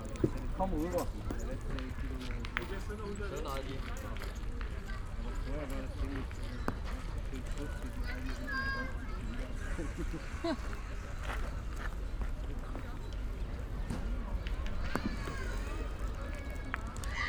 Paul-Linke-Ufer, Kreuzberg, Berlin - boule player
boule player's place at Paul-Linke-Ufer, alongside Landwehrkanal. it's the first spring day, sunny and warm, everybody seems to be out.
(geek note: SD702 DAP4060 binaural)